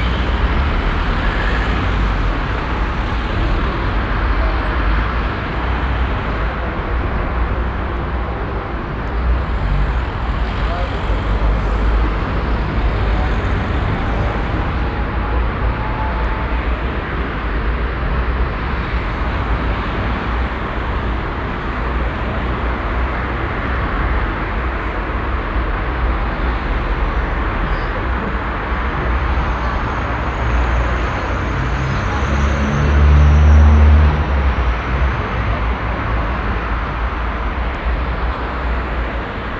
Central Area, Cluj-Napoca, Rumänien - Cluj, street crossing
At a street crossing of one of the central city main roads of Cluj. The sounds of traffic and people passing by. At the end in the distance the church bells of the nearby catholic church.
international city scapes - topographic field recordings and social ambiences